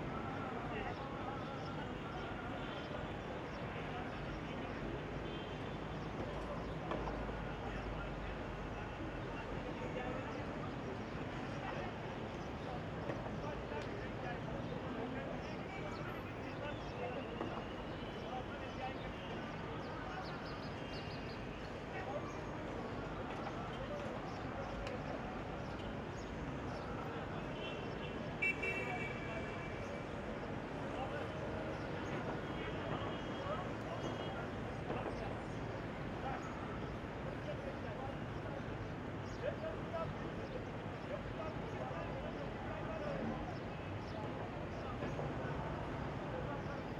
Dakar, Senegal - Stadtambi, Mittag
Aus dem 8. Stockwerk. Im Hintergrund: Muezzin.